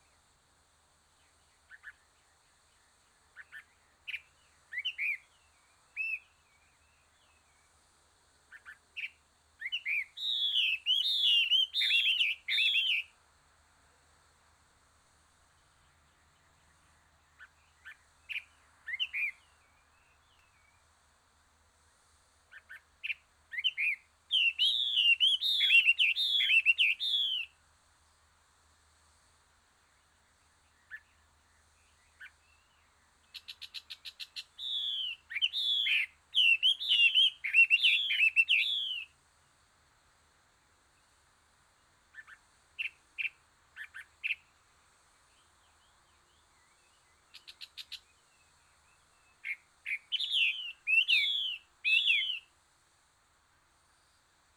Hong Kong Trail Sec., Hong Kong - H021 Distance Post
The twenty-first distance post in HK Trail, located at the Southeast of Chi Fu Valley. Rarely closely recorded the duet of Chinese hwamei; You may also hear the busy traffic of Pokfualm Road in the open view.
港島徑第二十一個標距柱，位於置富山谷東南方。罕有地超近距離錄到野生畫眉的雙唱口; 由於位置開揚，也能聽到薄扶林道繁忙的交通。
#Bird, #Cicada